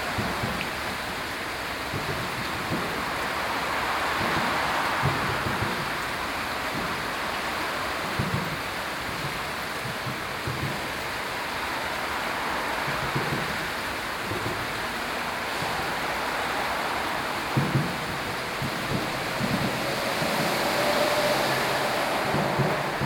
Střelecká, Ústí nad Labem-město-Ústí nad Labem-centrum, Czechia - Bílina soundscape, cars and trains
Under the highway next to the firth of the Bilina river.